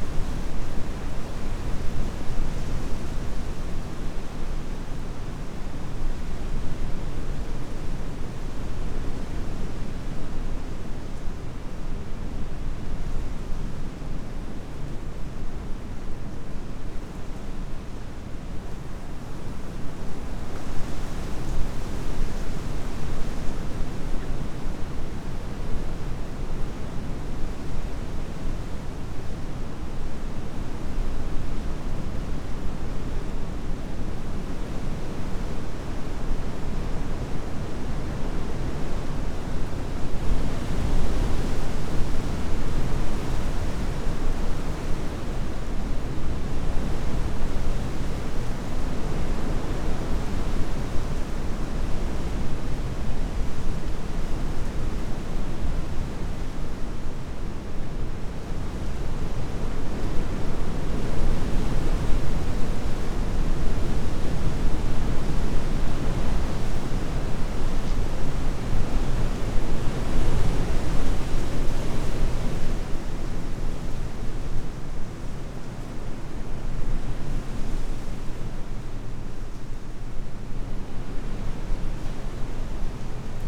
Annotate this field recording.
moor landscape, strong wind heard in a shelter, (Sony PCM D50, Primo EM172)